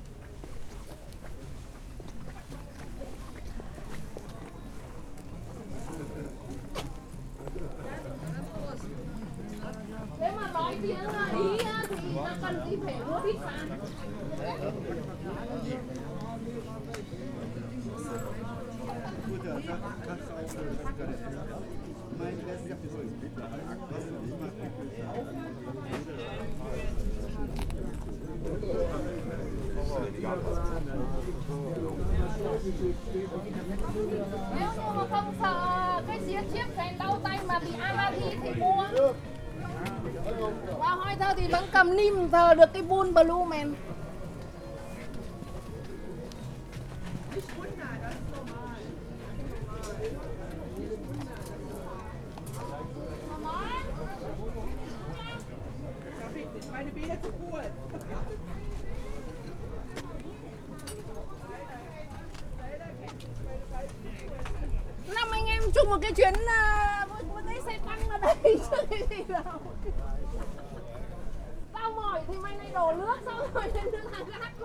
short soundwalk around the flea market, a crazy chinese (?) woman is talking to everyone in chinese but no one has a clue what she's saying
the city, the country & me: april 17, 2011